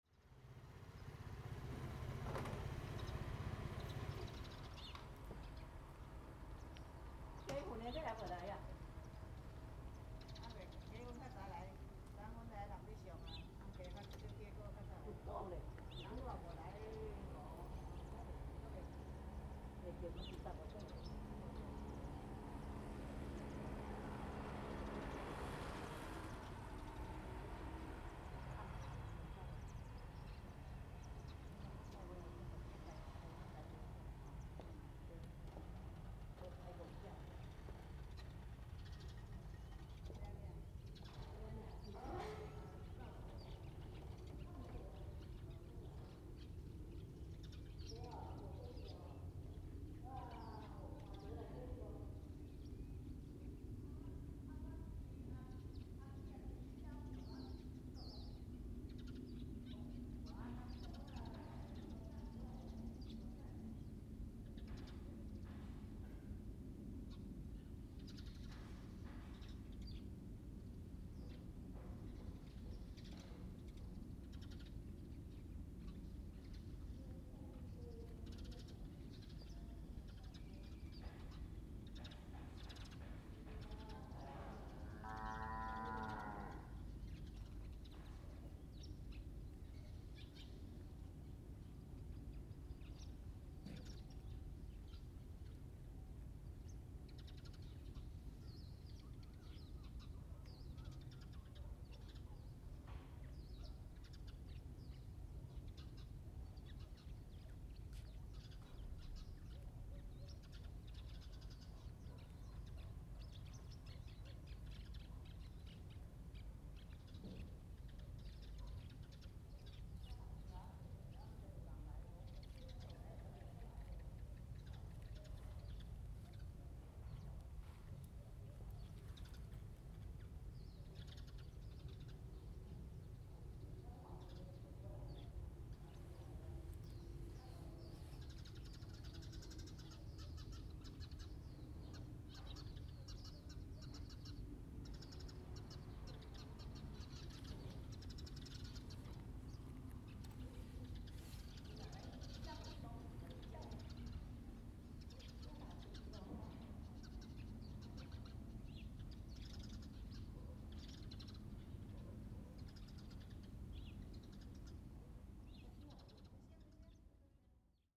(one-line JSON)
{"title": "青螺村, Huxi Township - In the square", "date": "2014-10-21 17:30:00", "description": "In the square, in front of the temple, Birds singing, Small village\nZoom H2n MS +XY", "latitude": "23.60", "longitude": "119.65", "altitude": "4", "timezone": "Asia/Taipei"}